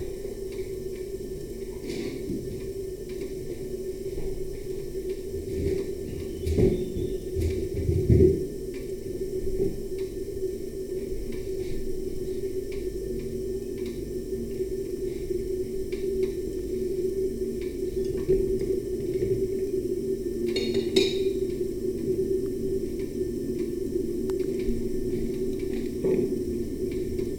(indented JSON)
{
  "title": "from/behind window, Mladinska, Maribor, Slovenia - teapot, citric acid, water, jesvah with coffee on electric panel",
  "date": "2015-05-10 10:07:00",
  "latitude": "46.56",
  "longitude": "15.65",
  "altitude": "285",
  "timezone": "Europe/Ljubljana"
}